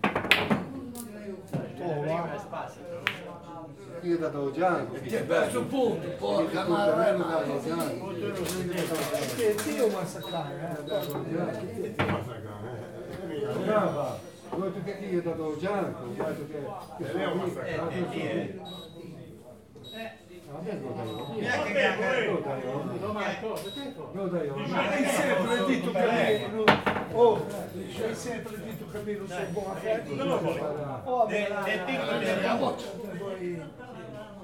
Viale Rimembranza, Sestri Levante GE, Italie - Bocette in fishermen house
Every day, retired fishermen go to the Fishermens House to play Bocette. The men throw billiard balls on the billiard table, while interpreting each other in a regional dialect. Tous les jours, les pêcheurs retraités se rendent à la Maison des pêcheurs pour jouer au bocette. Les hommes lancent des boules de billard sur le billard, tout en sinterpelant les uns les autres dans un dialecte régional.
Sestri Levante GE, Italy, 30 October 2016